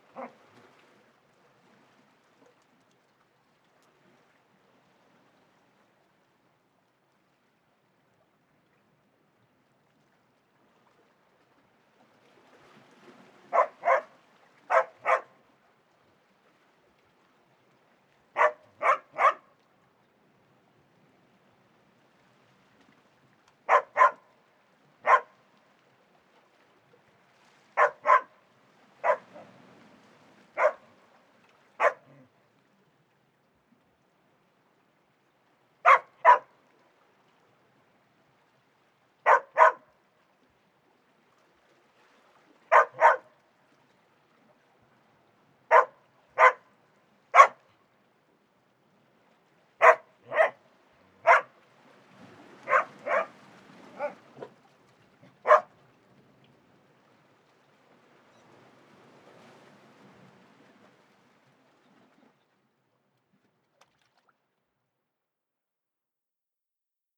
Ardnamurchan Point, Highlands, UK - Unexpected company
On my way to Corrachadh Mor (the little-known westernmost point of Great Britain) I stopped by the nearby lighthouse where two unaccompanied border collies greeted me. No one else was around at the time, and the two dogs followed me around for the rest of the day. We played some fetch and I shared my lunch, it was nice to not be so alone in such an isolated place. I never did find their owners (if they had any), at the end on the way back to my camp site they followed me for quite some time before running off somewhere else. In this recording they were barking at the water for some reason.